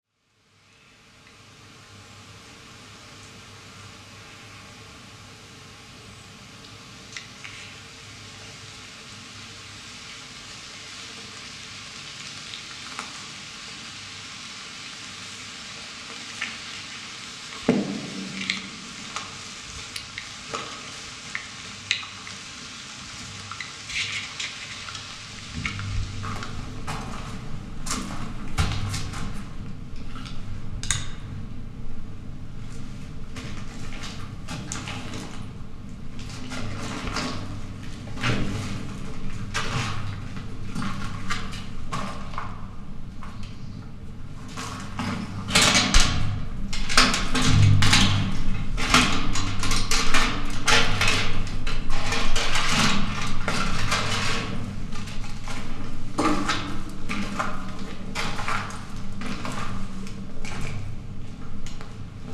Ostrau Stasi Alternate Command Center
Stasi, bunker, abandoned, DDR, orchard, ruin, Background Listening Post